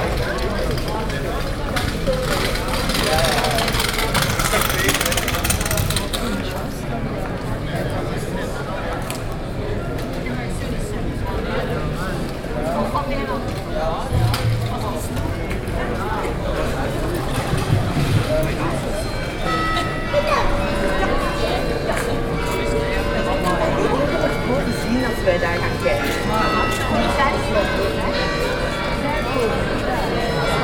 Hal, flea market.
Sur la brocante de Hal, beaucoup de monde, on y parle flamand, un peu français, le carillon puis les cloches de la majestueuse église Sint-Martinuskerk.